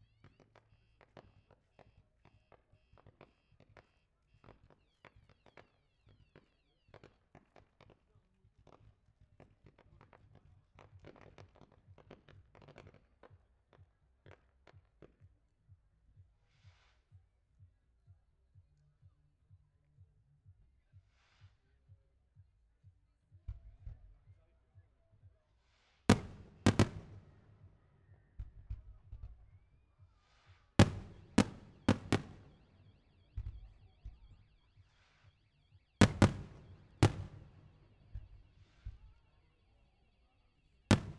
Bd Aristide Briand, Ouistreham, France - FireWorks
FireWorks in Normandy, Zoom F3 and two Rode NT55
Normandie, France métropolitaine, France, 13 July 2022